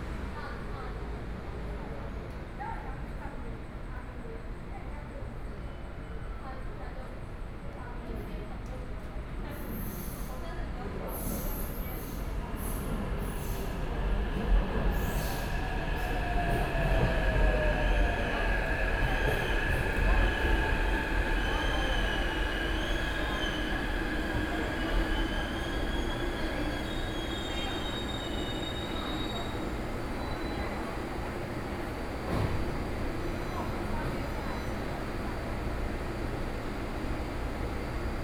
in the Platform, Sony PCM D50 + Soundman OKM II
北投區, 台北市 (Taipei City), 中華民國, 31 July 2013, 21:22